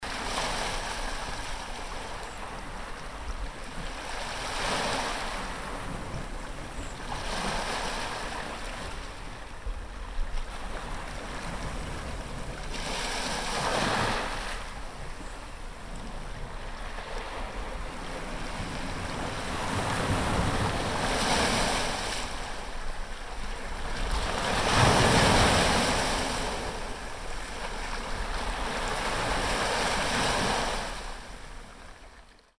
Kantrida, Rijeka, Sea and snow
sea splashing across the shore covered by snow.